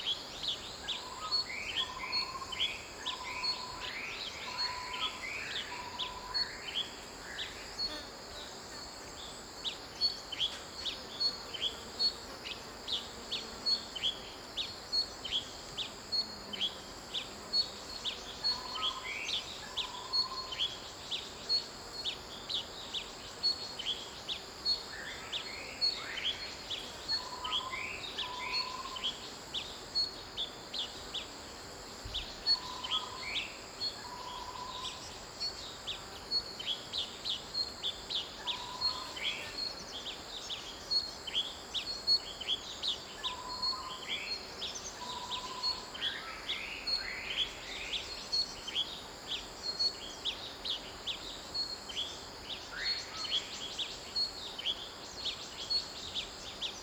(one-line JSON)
{"title": "Tapaw Farm, 達仁鄉台東縣 - Early morning", "date": "2018-04-06 06:07:00", "description": "Early morning on the farm in the mountains, Bird cry, Insect noise, Stream sound\nZoom H6+ Rode NT4", "latitude": "22.45", "longitude": "120.85", "altitude": "253", "timezone": "Asia/Taipei"}